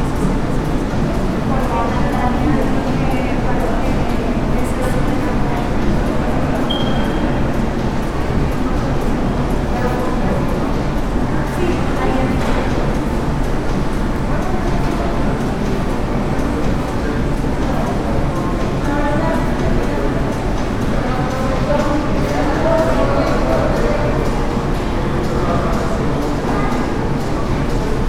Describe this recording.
Outside of Liverpool. Now part of the shopping center and was previously a parking lot. I made this recording on june 15th, 2022, at 2:11 p.m. I used a Tascam DR-05X with its built-in microphones and a Tascam WS-11 windshield. Original Recording: Type: Stereo, Esta grabación la hice el 15 de junio 2022 a las 14:11 horas.